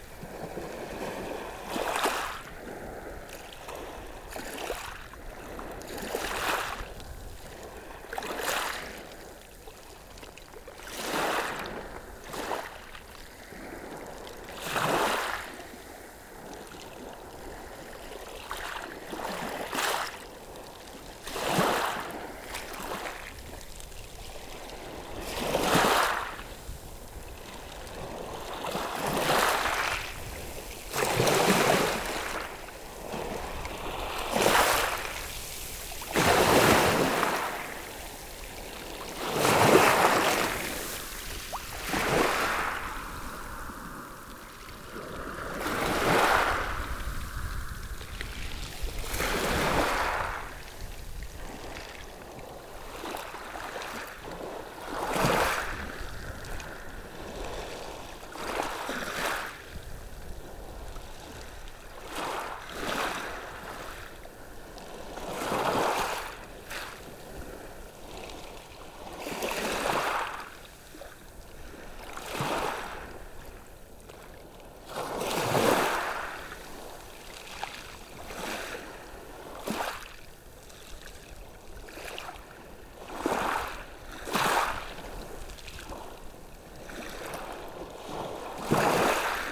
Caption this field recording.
Sound of the sea, with waves lapping on the gravels, at pointe du Hourdel, a place where a lot of seals are sleeping.